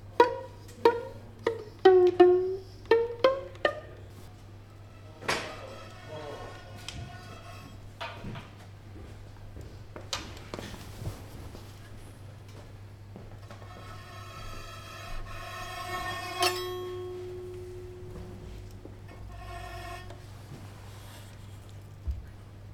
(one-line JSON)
{"title": "bonifazius, bürknerstr. - kaputte kindergeige", "date": "2008-11-17 15:30:00", "description": "17.11.2008 15:30 kindergeige in desolatem zustand, eine saite fehlt, bogen funktioniert nicht / broken violin, one string missing, defect bow", "latitude": "52.49", "longitude": "13.43", "altitude": "50", "timezone": "Europe/Berlin"}